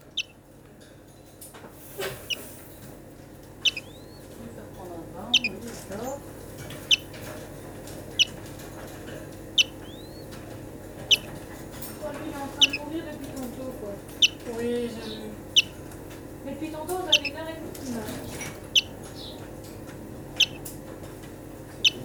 Ottignies-Louvain-la-Neuve, Belgique - Birdsbay, hospital for animals
Birdsbay is a center where is given revalidation to wildlife. It's an hospital for animals. Here, we can hear a lonely greenfinch, mixed in the common sounds of the daily life of the center. Strangely, he's doing the timepiece. But why ?